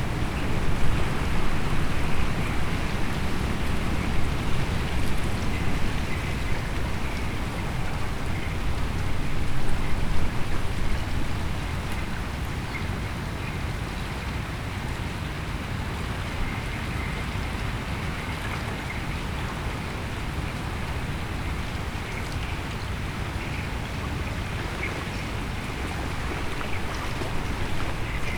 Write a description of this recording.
water pushes small ice sheets against the "ice edge" of a frozen cove of the havel river and strong wind blows small pieces of ice over the ice of the cove, the city, the country & me: march 24, 2013